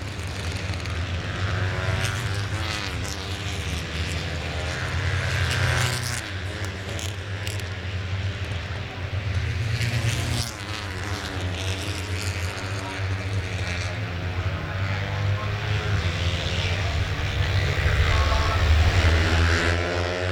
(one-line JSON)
{"title": "Lillingstone Dayrell with Luffield Abbey, UK - british motorcycle garnd prix 2013 ...", "date": "2013-09-01 14:44:00", "description": "moto3 race 2013 ... warm up lap and first few race laps ... lavalier mics ...", "latitude": "52.07", "longitude": "-1.02", "timezone": "Europe/London"}